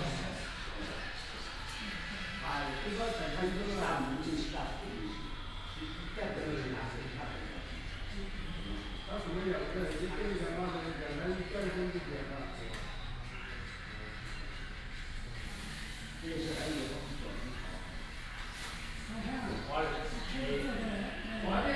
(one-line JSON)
{"title": "大鵬新城, North Dist., Hsinchu City - In the community hall", "date": "2017-10-19 14:45:00", "description": "In the community hall, Many elderly people chatting, Young from all over China, Various languages and accents, Binaural recordings, Sony PCM D100+ Soundman OKM II", "latitude": "24.80", "longitude": "120.95", "altitude": "19", "timezone": "Asia/Taipei"}